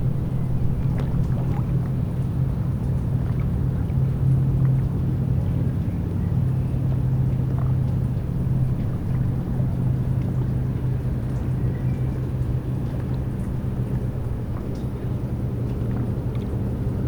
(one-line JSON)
{"title": "Gmunden, Traunsteinstrasse, Österreich - evening on the shore of traunsee", "date": "2014-06-20 18:50:00", "description": "sitting st the shore of Traunsee.", "latitude": "47.88", "longitude": "13.81", "altitude": "438", "timezone": "Europe/Vienna"}